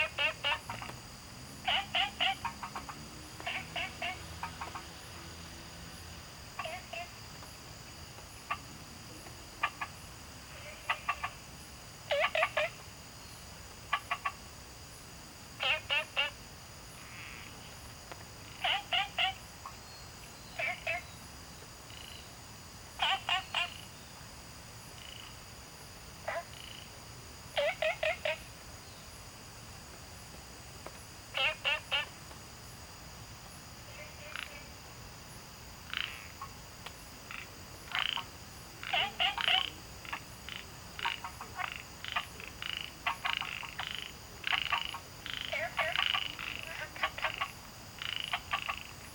青蛙ㄚ婆ㄟ家, Puli Township, Nantou County - Frogs chirping
Frogs chirping, Insects called, Small ecological pool
Zoom H2n MS+XY
Nantou County, Puli Township, 桃米巷11-3號